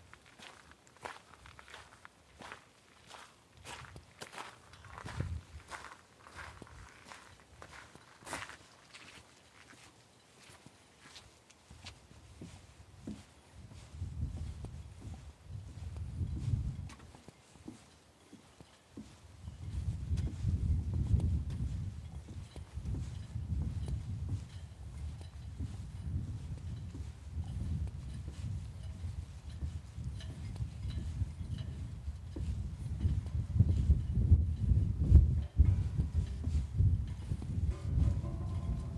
Snug Harbor
Leave the cabin on a bitterly cold 5am in the San Juan Islands (Washington). Walk toward the dock, stopping at a loose metal sign. Surprise a sleeping blue heron. Step on a loose board. End on the dock to the gamelan chorus of sailboat riggings in the wind.